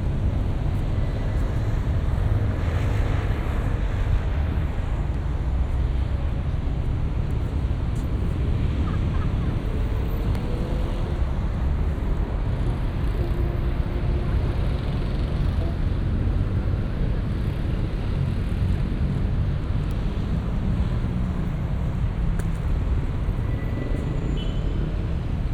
Athens, square in front of Panathenaic Stadium - evening traffic
(binaural) heavy and tiresome traffic is common in Athens. the intersection in front of me was totally jammed. as soon as some space was made the drivers took off furiously, cranking up their engines and making even more noise. (sony d50 + luhd PM-01's)